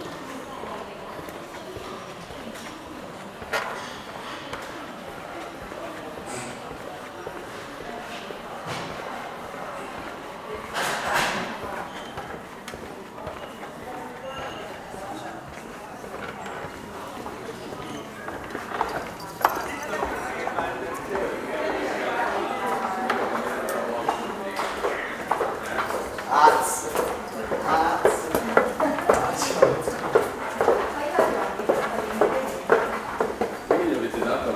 entering the Offices: from street, into the main hall, crossing the cafeteria, and then into the elevators